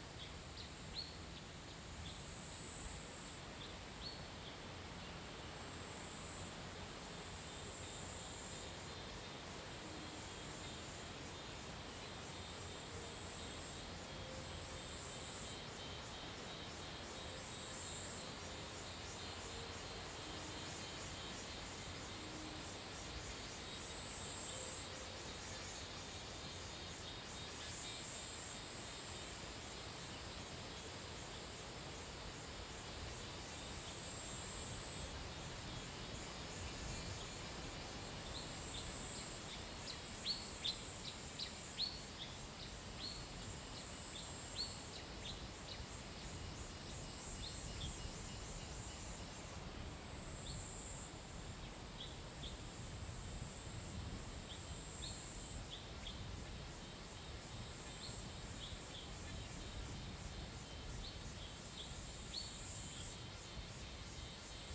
桃米里, 埔里鎮, Taiwan - In the woods
Bird sounds, In the woods, Cicadas sound
Zoom H2n MS+XY
Puli Township, Nantou County, Taiwan, 7 June